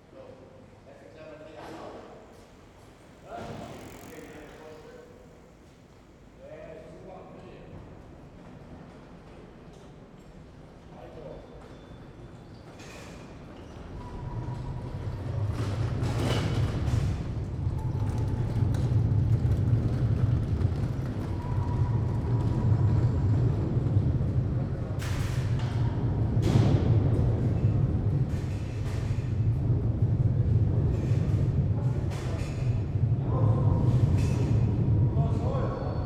köln, maybachstr. - under bridge, traffic
under bridge, workers, different kind of traffic on and below the brigde